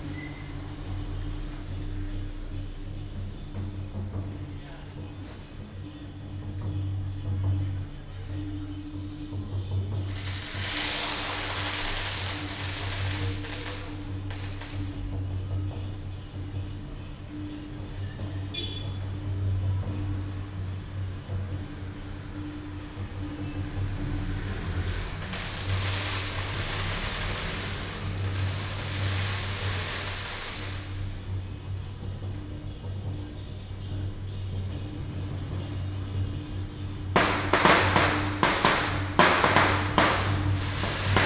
大仁街, Tamsui District, New Taipei City - temple fair
Traditional temple festivals, Firecrackers sound, temple fair
New Taipei City, Taiwan